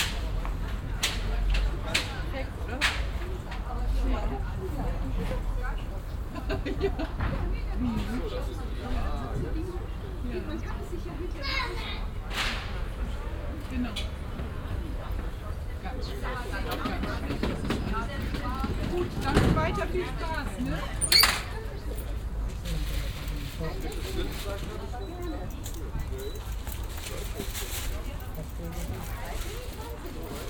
refrath, siebenmorgen, marktplatz, biomarkt
früher morgen, betrieb auf dem wöchentlichen biomarkt, stimmen, fahrradständer, tütenknistern aus- und einladen von waren
soundmap nrw - social ambiences - sound in public spaces - in & outdoor nearfield recordings